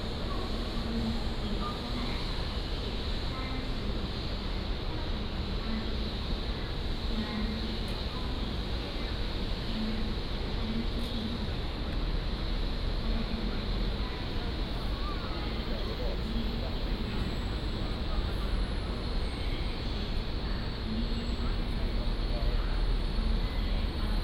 台灣高鐵台中站, Taiwan - In the square outside the station
In the square outside the station